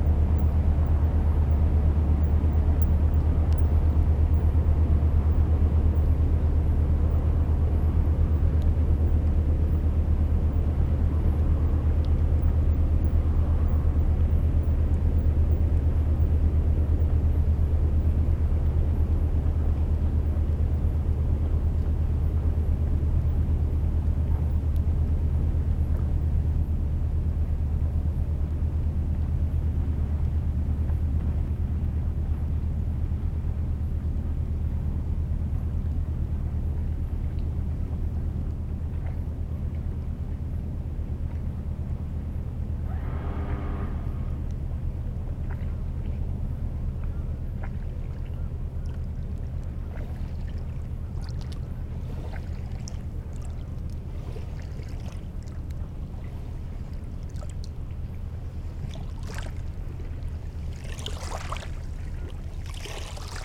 Venables, France - Boat
A big industrial boat is passing by on the Seine river, by night. We don't see anything but we ear it.